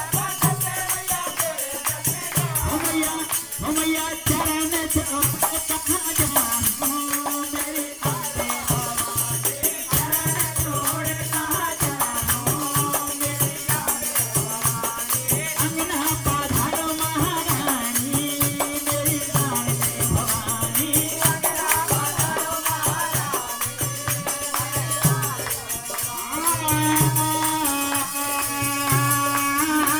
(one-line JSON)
{"title": "Pachmarhi, Madhya Pradesh, Inde - Hindus singing a pray", "date": "2015-10-18 17:03:00", "description": "In the end of afternoon, a group of men sings in a very small temple.", "latitude": "22.46", "longitude": "78.41", "altitude": "1098", "timezone": "Asia/Kolkata"}